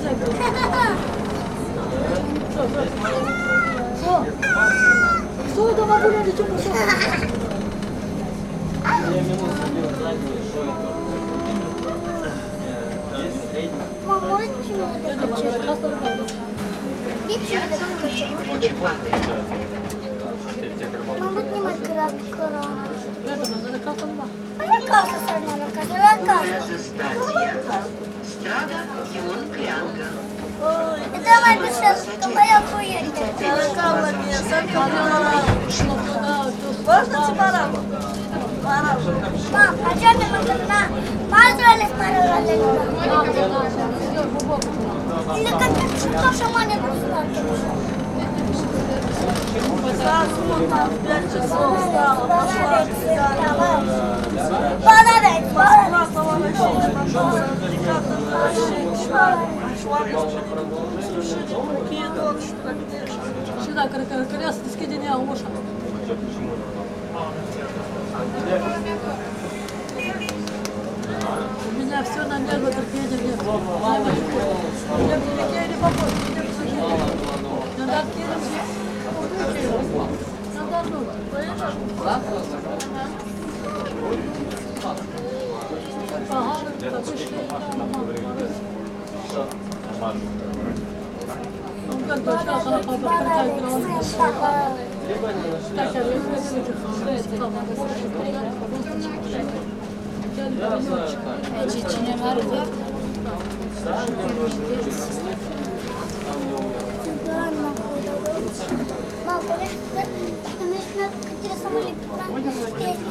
Sectorul Buiucani, Chisinau, Moldova - Trolleybus ride
Taking a trolleybus from Strada Alba Iulia to Bulevardul Ștefan cel Mare in the center of Chișinău.
Chişinău, Moldova, 19 July 2015, ~21:00